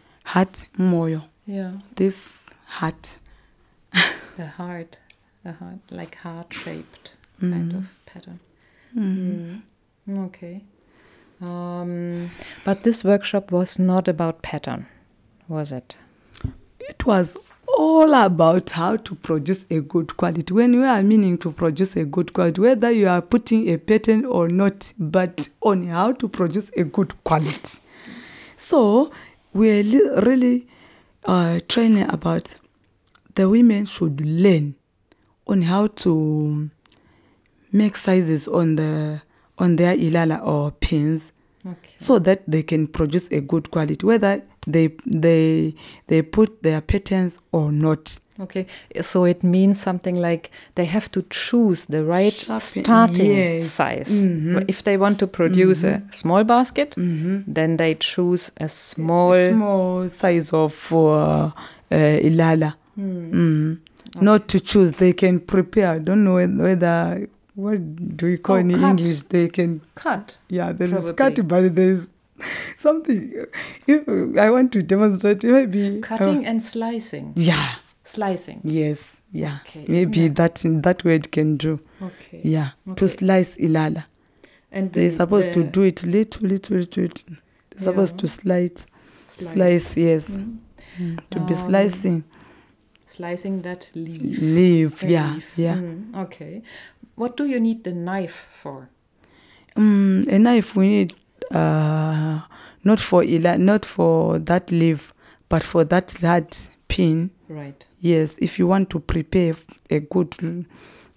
Office Zubo Trust, Binga, Zimbabwe - Donor sharing secrets of ilala basket weaving
Donor and I are diving into some of the secrets of ilala weaving. i’m fascinated to understand more about the actual making of ilala baskets and the intricate knowledge on how best to treat the natural resource of ilala (palm leave) to ready it for producing “good quality crafts”. The occasion for this interview recording with Donor Ncube was her organising, participating in and documenting the ilala weavers workshop in Chinonge. Donor is ilala crafts and financial assistance officer at Zubo Trust.
27 September 2018, ~15:00